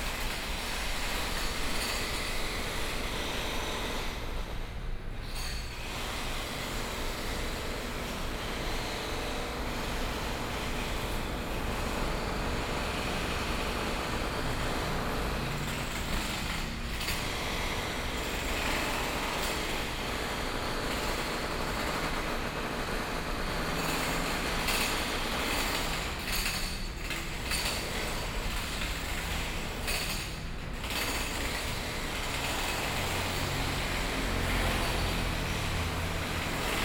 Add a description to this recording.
Construction noise, The traffic sounds, Binaural recordings, Zoom H4n+ Soundman OKM II